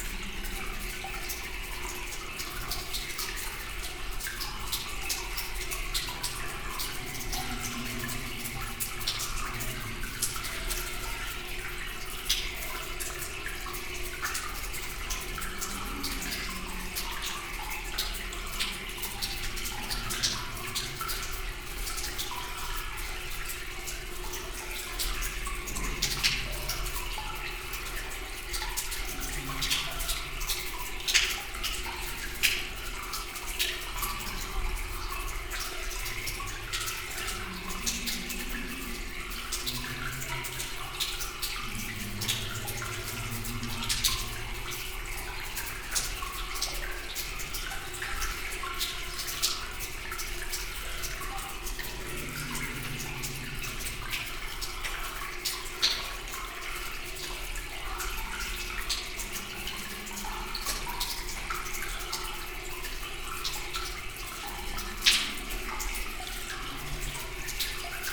Montagnole, France - Train tunnel
Into an underground train tunnel, sound of water flowing into a 130 meters deep pit.